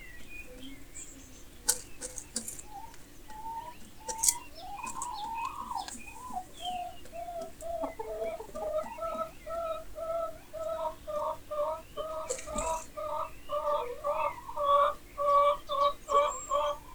Making Eggs - 39 Alston, UK - Fresh Eggs
These ladies where making fresh eggs